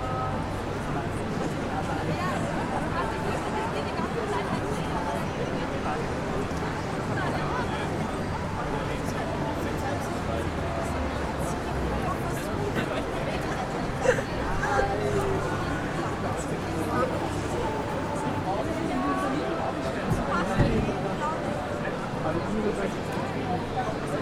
graz i. - weihnachtsmarkt am eisernen tor
weihnachtsmarkt am eisernen tor